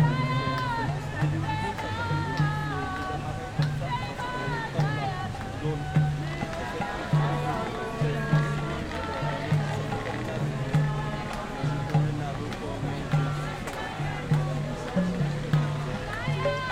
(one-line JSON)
{"title": "Pl. du Président Thomas Wilson, Toulouse, France - Hare Krishna in the park", "date": "2022-06-11 18:00:00", "description": "Hare Krishna in the park\nCaptation : ZOOMH6", "latitude": "43.60", "longitude": "1.45", "altitude": "154", "timezone": "Europe/Paris"}